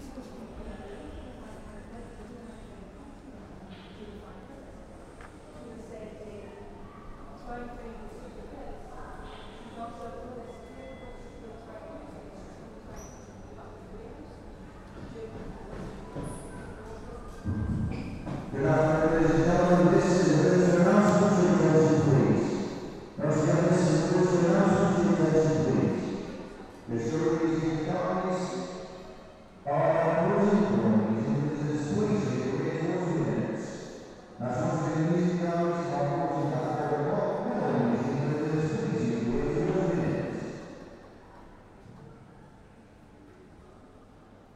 Discovery Museum, Newcastle upon Tyne, UK - Discovery Museum Closing Building Announcement
Inside Discovery Museum just before closing to public. Children's ride sounds, lifts, museum staff and public, tannoy announcement for building closing to public. Recorded on Sony PCM-M10.
7 September 2016, 15:55